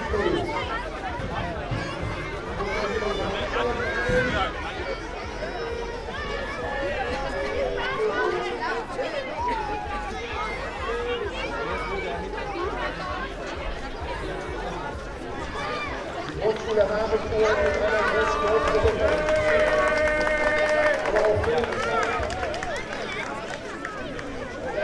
Hauptstr., Oldenburg - Brunnenlauf, preparation for public marathon
preparation for the Brunnenlauf, a public annual marathon, kids run will start soon.
(Sony PCM D50, Primo EM172)
Oldenburg, Germany, 7 June 2015